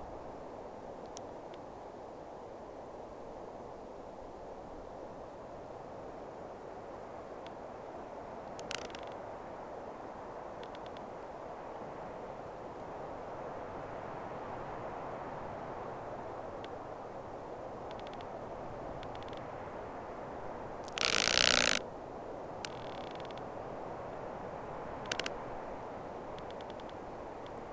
Hures-la-Parade, France - Firs
Into the wind, the firs trunks are cracking up.